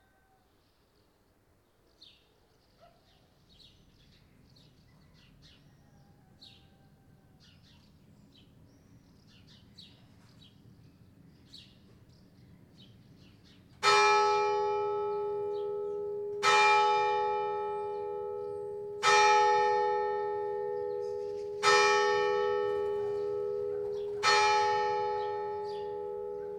Avinguda de la constitució, Bolulla, Alicante, Espagne - Bolulla - Espagne - clocher 8h matin
Bolulla - Province d'Alicante - Espagne
Clocher - 8h (8 coups - 2 fois) + Angélus
Prise de sons :JF CAVRO
ZOOM F3 + AKG 451B
Comunitat Valenciana, España, 15 July 2022, 08:00